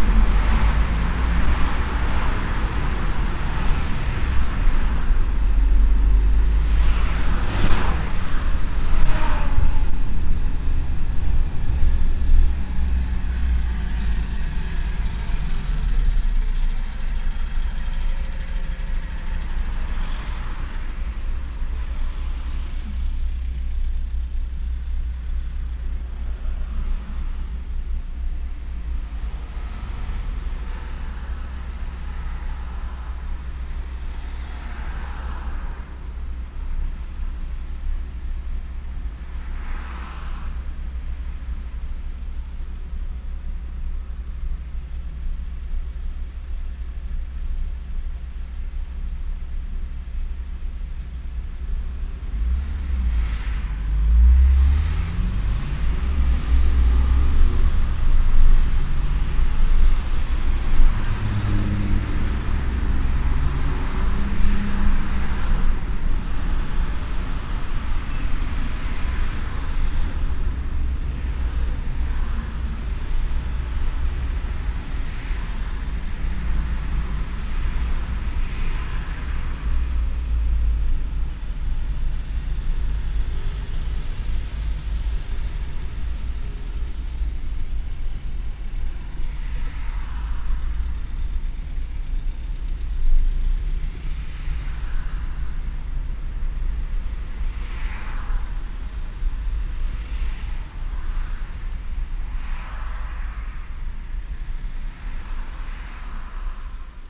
cologne, innere kanalstrasse, stadtauswärts im verkehr
innere kanalstrasse stadtauswärts - nach köln nord - verkehr an ampel und anfahrt- nachmittags - parallel stadtauswärts fahrende fahrzeuge - streckenaufnahme teil 05
soundmap nrw: social ambiences/ listen to the people - in & outdoor nearfield recordings